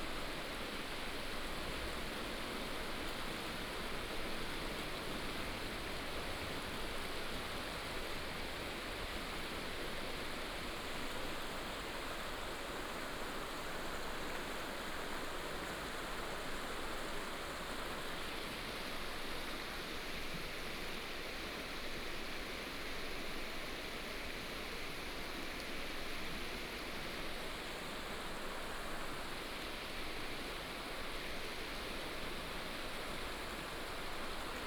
太麻里溪, Taimali Township, Taitung County - River sound
On the river bank, stream
Binaural recordings, Sony PCM D100+ Soundman OKM II